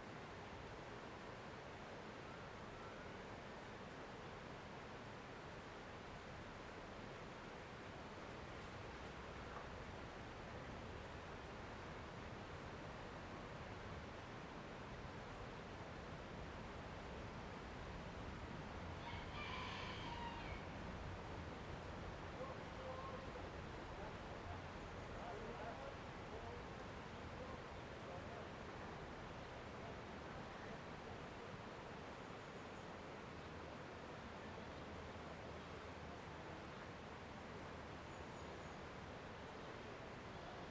Τα Παπάκια, Πινδάρου, Ξάνθη, Ελλάδα - Park Nisaki/ Πάρκο Νησάκι- 11:00
River flow, people talking distant, rooster crowing.
12 May 2020, 11:00